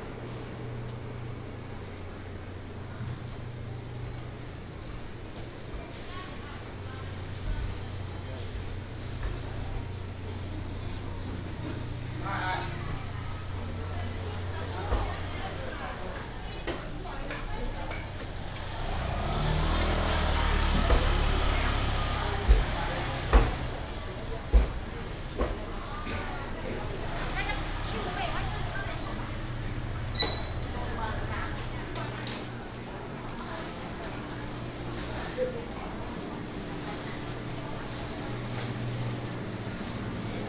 竹東中央市場, Zhudong Township - Traditional market
walking in the Traditional market, vendors peddling, Binaural recordings, Sony PCM D100+ Soundman OKM II